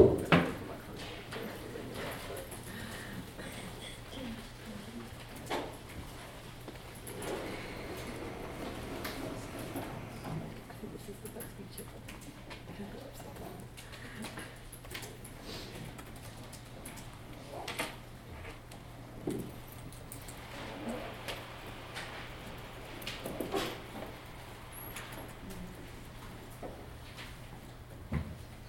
Filozofická fakulta, Univerzita Karlova v Praze, nám. J. Palacha, Praha-Staré Město, Czechia - Jan Palach University Library
Knihovna Jana Palacha, Červená knihovna. Library noise, people studying, chatting, walking by, keyboard clicking.
Recorded with Zoom H2n, 2 channel stereo mode, HIGH GAIN.